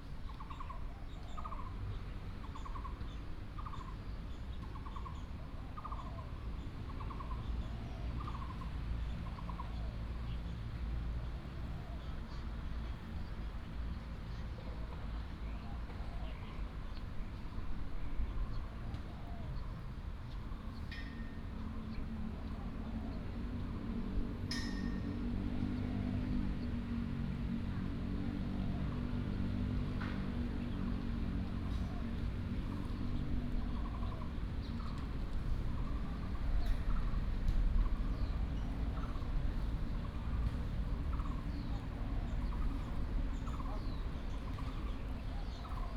中壢藝術園區, Taoyuan City - in the Park
in the Park, Bird call, traffic sound
Zhongli District, 新街溪河濱步道, August 2017